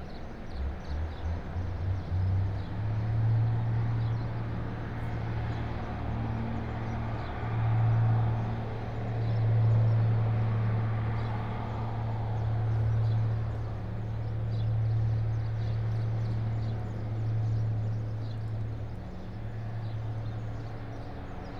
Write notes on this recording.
Ta'Zuta quarry, operates a ready mixed concrete batching plant and a hot asphalt batching plant, ambience from above, (SD702, DPA4060)